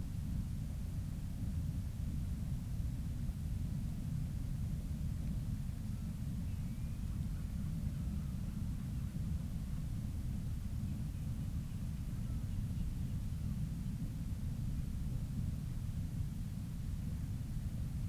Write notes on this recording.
Skokholm Island Bird Observatory ... storm petrels ... quiet calls and purrings ... lots of space between the calls ... open lavaliers clipped to sandwich box on top of a bag ... clear calm evening ...